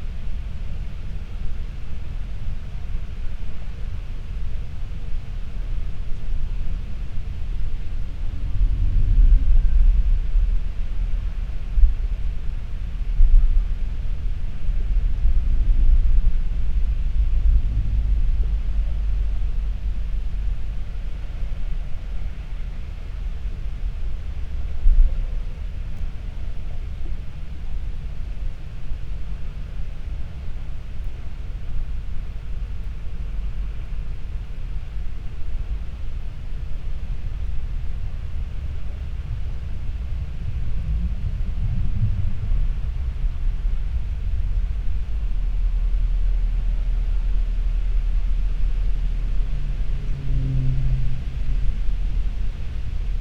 concrete tube, probably for wastewater once, now only for winds
Muggia, Trieste, Italy, 6 September